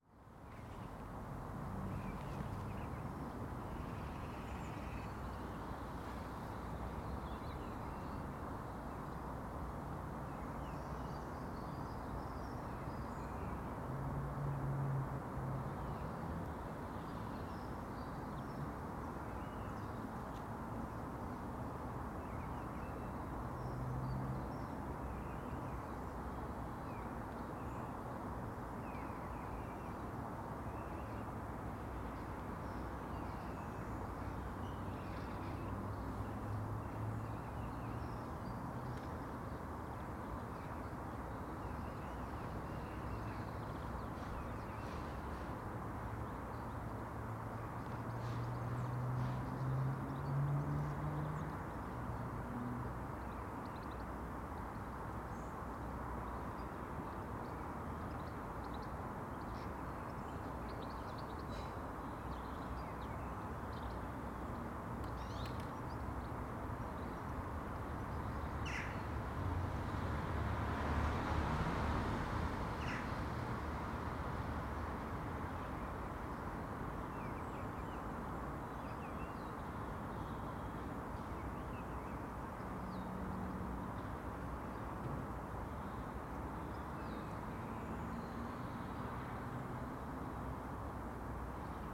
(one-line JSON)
{"title": "Contención Island Day 44 inner southwest - Walking to the sounds of Contención Island Day 44 Wednesday February 17th", "date": "2021-02-17 09:35:00", "description": "The Drive Moor Place Woodlands Oaklands\nMoss dots the pitted tarmac\namong the alleyway leaf litter\nA single Blue Tit\nand the distant calls of Jackdaws\nA blackbird materialises atop a mahonia\ndrops into the next door garden\nFive skeins of pinkfeet\nabout 150 birds\nfly north calling", "latitude": "55.00", "longitude": "-1.62", "altitude": "71", "timezone": "Europe/London"}